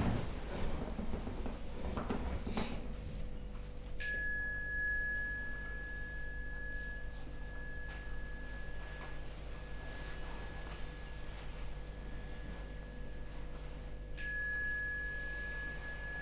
Unnamed Road, Dorchester, UK - Morning Kinh Hanh at New Barn
Morning walking meditation (Kinh Hanh) for a group of practitioners at New Barn Field Centre in Dorset. This upload captures the movement from sitting meditation to walking meditation and back. The sounds of the bells, practitioners and rustling of clothing are underpinned by the buzz of four electric heaters overhead, the ticking of a clock behind and sounds of planes and birds outside. (Sennheiser 8020s either side of a Jecklin Disk on a SD MixPre6)